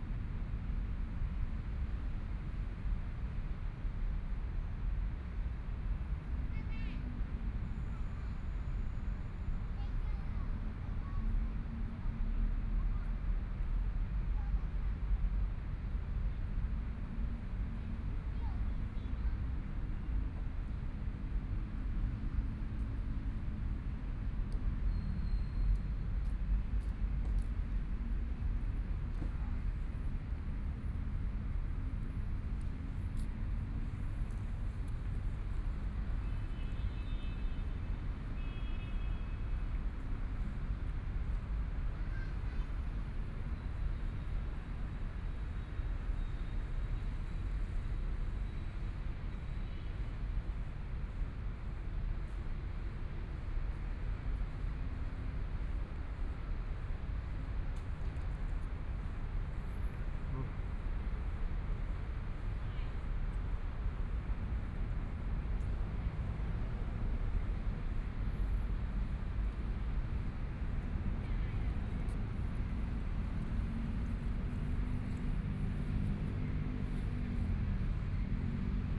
林森公園, Taipei City - Night in the park
Night in the park, Traffic Sound
Please turn up the volume
Binaural recordings, Zoom H4n+ Soundman OKM II